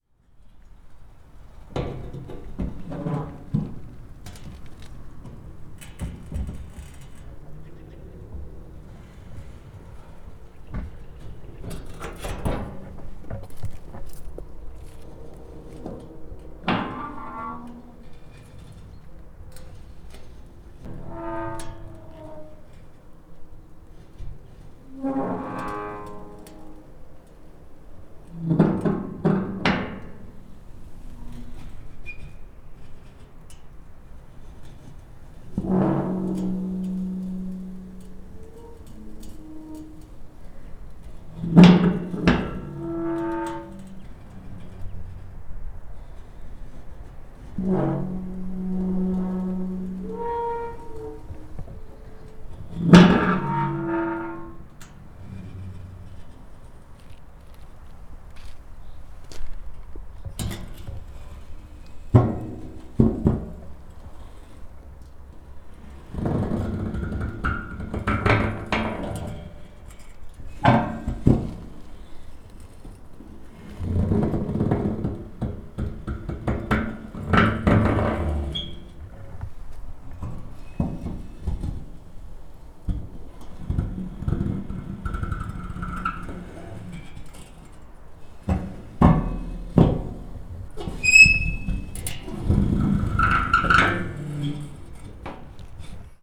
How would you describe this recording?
manipulating with three garbage container lids for a short solo